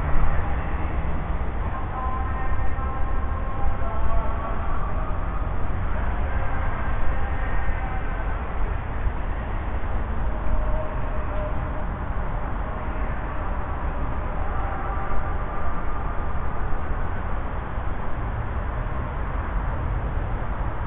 Krasinskiego, Warsaw
Distant sound of Stanislaw Kostkas church night service